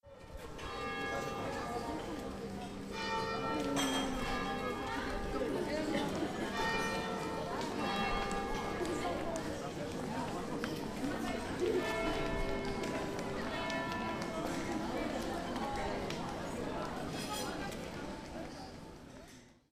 Bayonne, devant la cathedrale
Bayonne, cathédrale, cathedral, terrasse